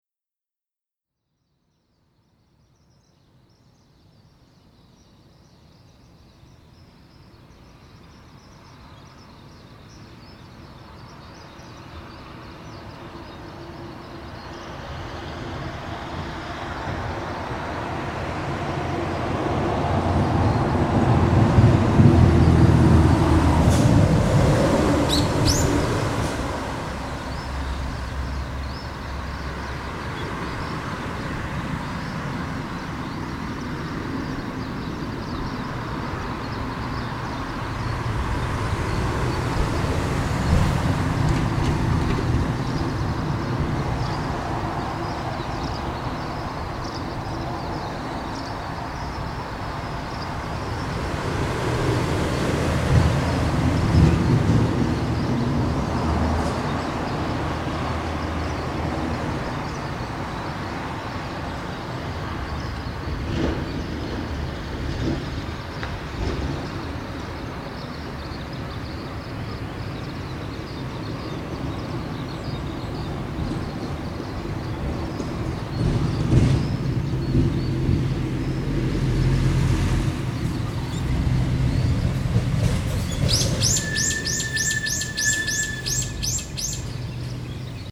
Seki - Dawn on Kameyama
A straightforward dawn chorus recording, but with the usual sound of Route 1 traffic competing with the birdsong. This was recorded as I awoke – still in my sleeping bag – on the West side of Kameyama at the foot of the path up Kannonyama.
Kameyama-shi, Mie-ken, Japan, 4 April 2015, 05:30